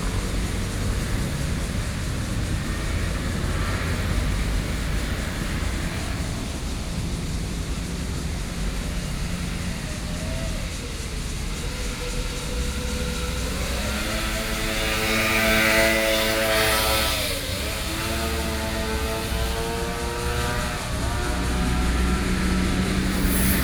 Daye Rd., Beitou Dist. - Sitting on the roadside
Sitting on the roadside, Hot weather, Cicadas sound, Traffic Sound
Beitou District, Taipei City, Taiwan, 9 July